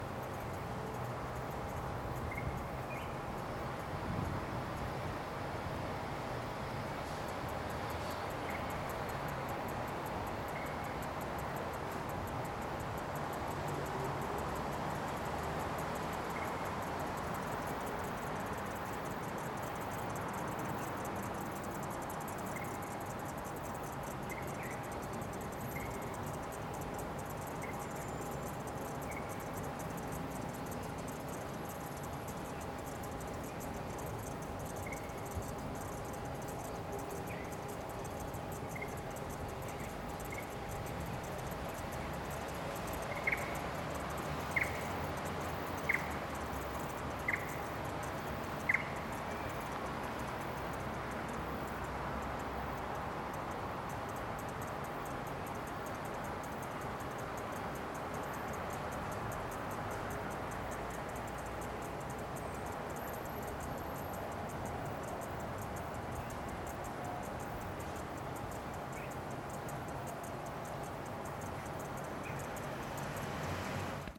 Stuart Street Park, Perth, Western Australia - Cicadas and Birds in Small, Urban Park.
Classic beginning of summer sounds for Perth. Not a great recording, needed a 200hz high pass filter because of the traffic and wind noise, but it captures a sense of place and season. I was facing into the small, grassy hedge. Zoom h2n with Zoom windscreen and ATX m40x cans.